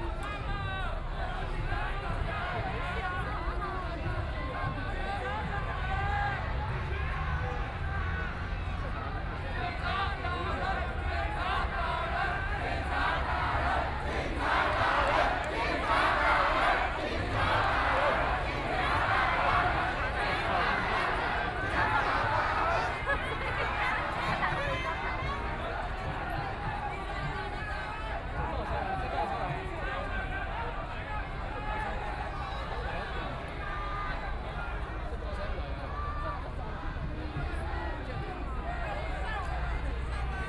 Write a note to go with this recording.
University students occupied the Executive Yuan, Binaural recordings